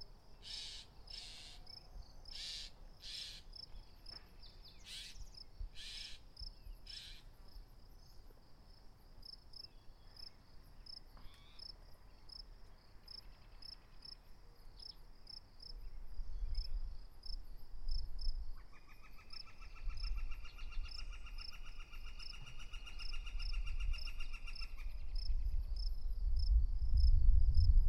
Paseo Rd, Colorado Springs, CO, USA - Palmer Park evening chorus
Woodhouse's Scrub-Jays, Spotted Towhees, Robins, Flickers, crickets and other birds on a breezy evening in Palmer Park